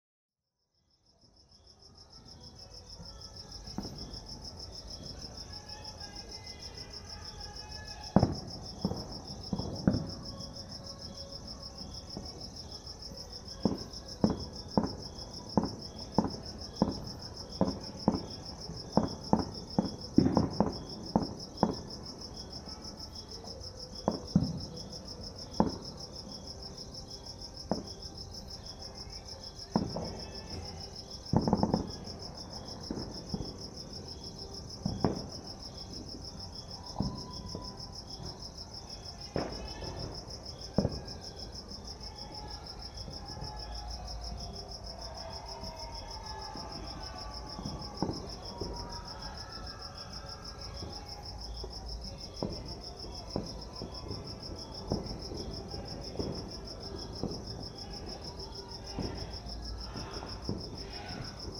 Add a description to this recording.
Midnight revelry in suburban Johannesburg. Fireworks. Primo 172's to SD702